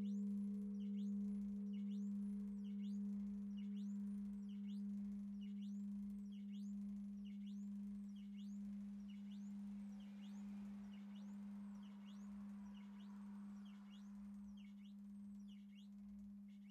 Pl. du Château, Frazé, France - Frazé - église Notre Dame
Frazé (Eure et Loir)
Église Notre Dame
Une seule cloche - Volée